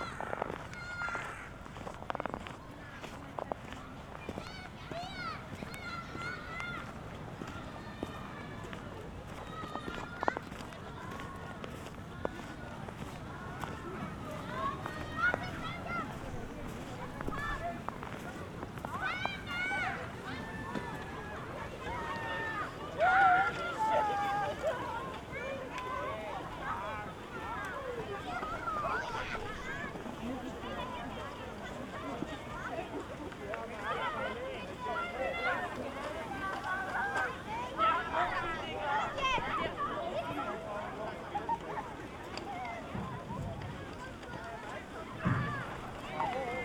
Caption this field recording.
Berlin, Görlitzer Park, cold Winter Sunday afternoon, heavy snowing, walking into the park, a playground /w a small hill, many kids and parents riding sledges, Corona/Covid rules are paused... (SD702, Audio Technica BP4025)